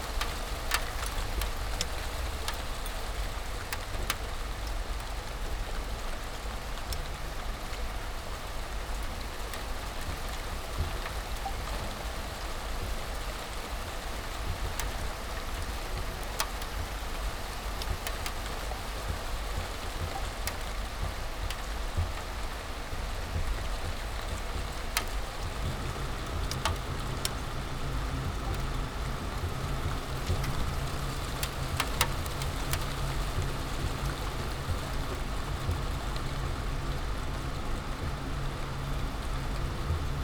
from/behind window, Mladinska, Maribor, Slovenia - rain in march, few ice crystals
15 March 2015